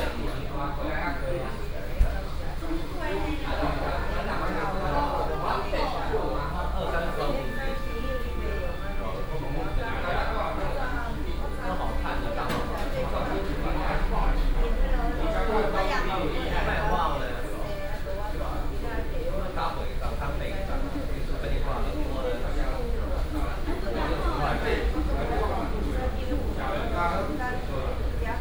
Guangming St., Xindian Dist., New Taipei City - In the restaurant
In the restaurant